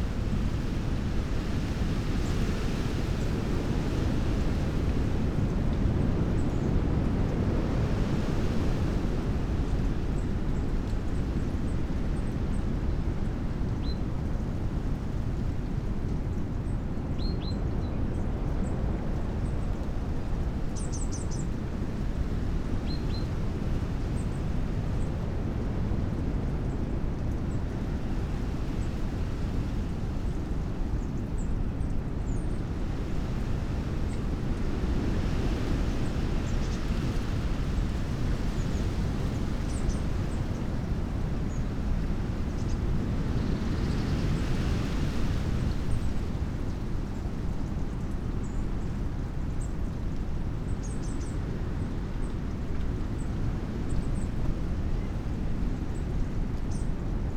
bird feeders soundscape at rspb bempton ... xlr sass to zoom h5 ... unattended ... time edited recording ... bird calls ... tree sparrow ... blackbird ... great tit ... blue tit ... goldfinch ... herring gull ... windy ...
Yorkshire and the Humber, England, United Kingdom, 5 December 2021, 09:30